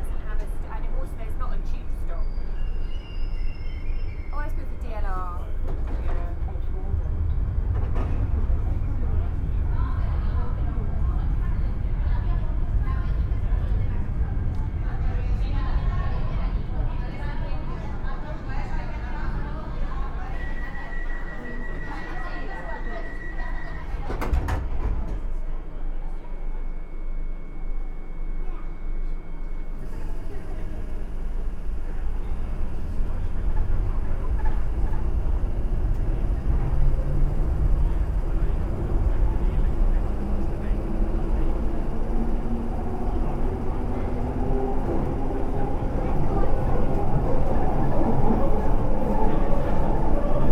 Ride the London Tube from Bethnal Green to Paddington. - London, UK
A real-time journey on the London Underground from the East End at Bethnal Green to the main line Terminus at Paddington. Recorded with a Sound Devices Mix Pre 3 and 2 Beyer lavaliers.